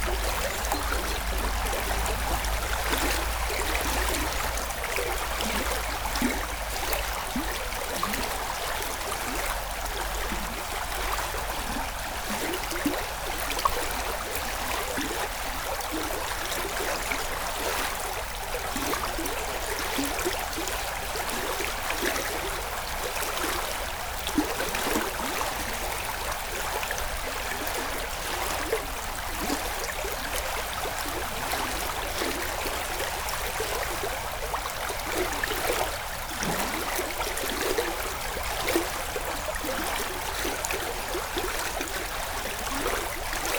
Houx, France - Voise river
The small Voise river in the quiet village of Houx.